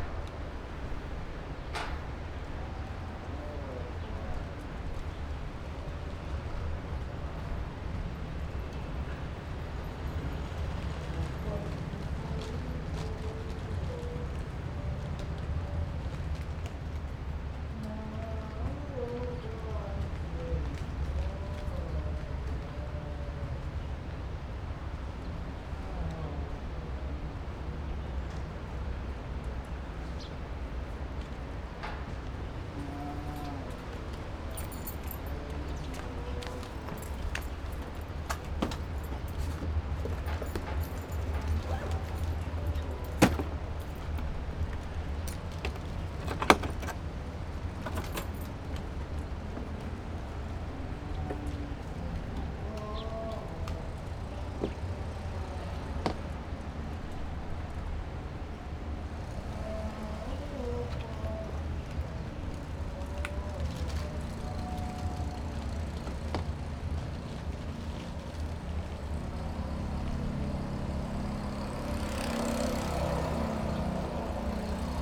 觀音亭海濱公園, Magong City - In front of the temple
In front of the temple
Zoom H6+Rode NT4
Penghu County, Taiwan, 23 October